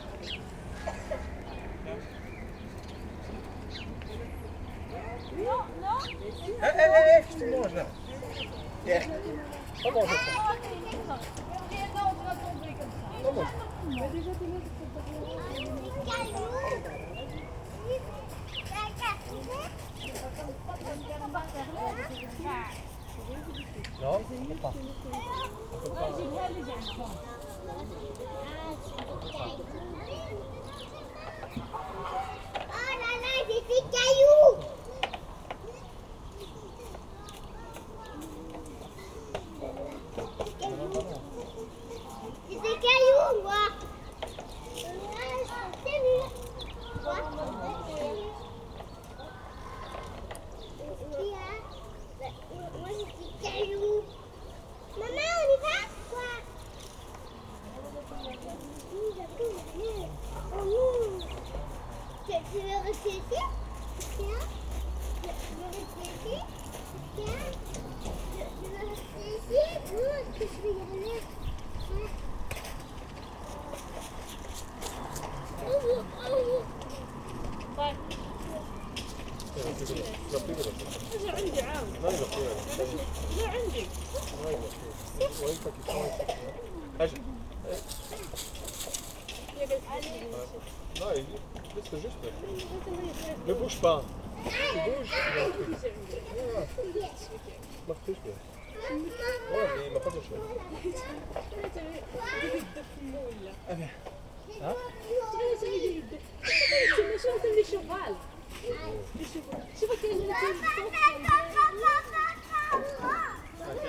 Mellery, Villers-la-Ville, Belgique - Playground
Children playing in a playground and geese shouting on the neighborhood. Mellery is a small and very quiet village.